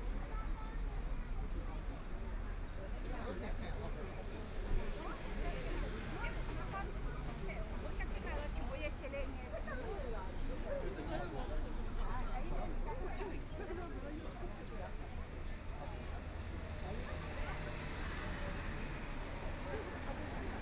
Sweet love branch, Shanghai - soundwalk
Walking along the street, The crowd and the sound of the store, Traffic Sound, Zoom H6+ Soundman OKM II
Shanghai, China, 23 November 2013